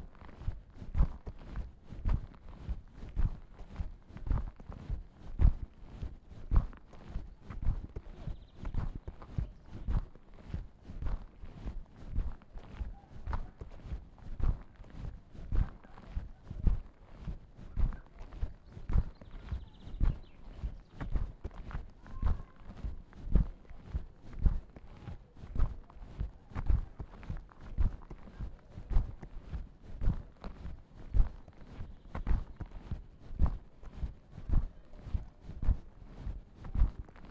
{"title": "berlin: schlesischer busch - walking the bags: walking bag #0013 by walking hensch", "date": "2008-06-20 18:20:00", "latitude": "52.49", "longitude": "13.45", "altitude": "33", "timezone": "Europe/Berlin"}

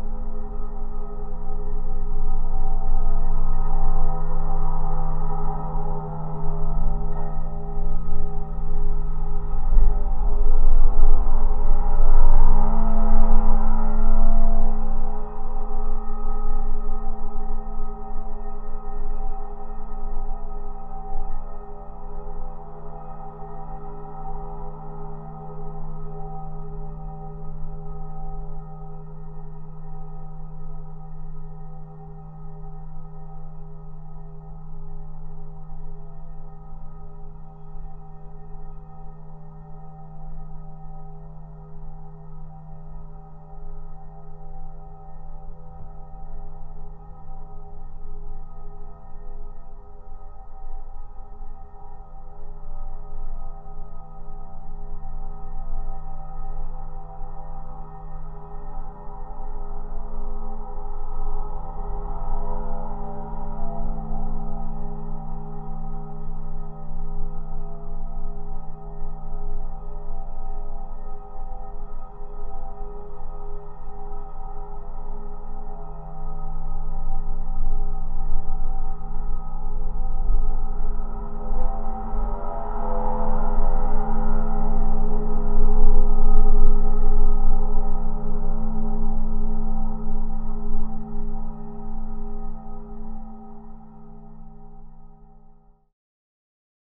{"title": "Daugavpils, Latvia, tram lines wires pole", "date": "2020-01-26 14:25:00", "description": "new LOM geophone attached to tram line's wire pole", "latitude": "55.88", "longitude": "26.53", "altitude": "96", "timezone": "Europe/Riga"}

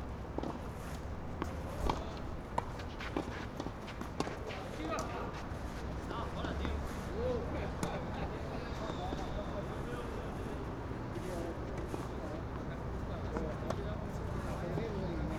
{"title": "Club Esportiu", "date": "2011-03-05 13:55:00", "description": "Jubilated men playing tennis in a working day morning", "latitude": "41.38", "longitude": "2.12", "altitude": "56", "timezone": "Europe/Madrid"}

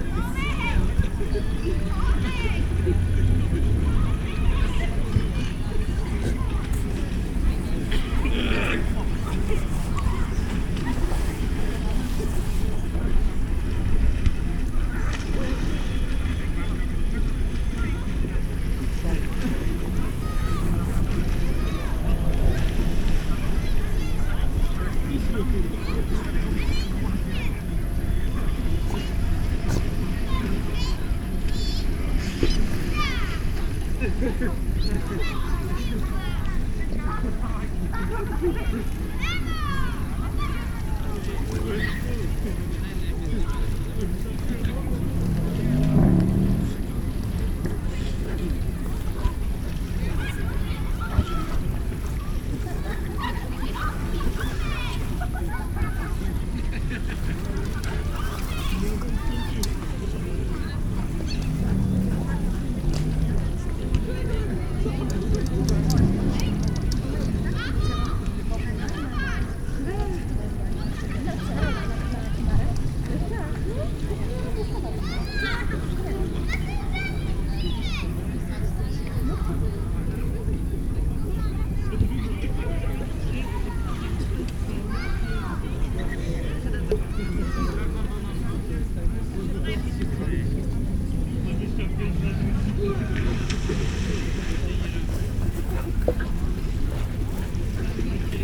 {"title": "Park beach at Kiekrz lake, Poznan - midweek summer evening", "date": "2022-07-20 23:13:00", "description": "evening ambience at a park beach at Kiekrz lake. A few people enjoying summer evening at the lake. recorded on a bench at a distance from the beach. (roland r-07)", "latitude": "52.47", "longitude": "16.78", "altitude": "74", "timezone": "Europe/Warsaw"}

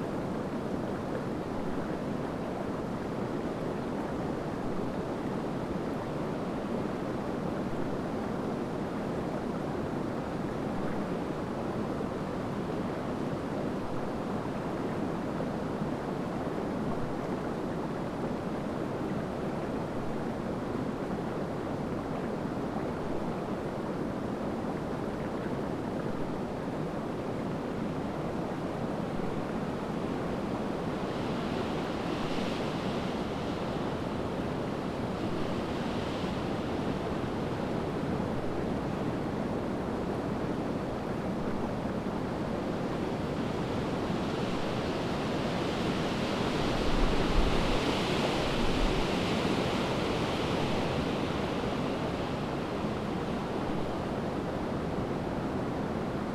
{"title": "neoscenes: Medano Creek and wind", "latitude": "37.80", "longitude": "-105.50", "altitude": "2577", "timezone": "Europe/Berlin"}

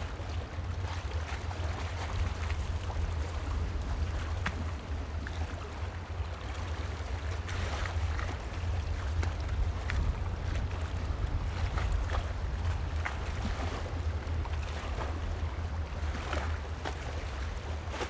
{"title": "觀音亭海濱公園, Magong City - Waves and tides", "date": "2014-10-23 07:19:00", "description": "Waves and tides, Waterfront Park\nZoom H6 + Rode NT4", "latitude": "23.57", "longitude": "119.56", "altitude": "8", "timezone": "Asia/Taipei"}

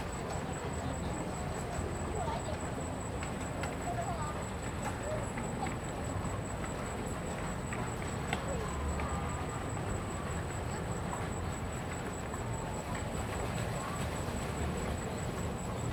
{"title": "Bitan, Xindian Dist., New Taipei City - At the lake", "date": "2015-08-01 16:09:00", "description": "Many tourists stepping on the boat on the lake\nZoom H2n MS+ XY", "latitude": "24.95", "longitude": "121.54", "altitude": "50", "timezone": "Asia/Taipei"}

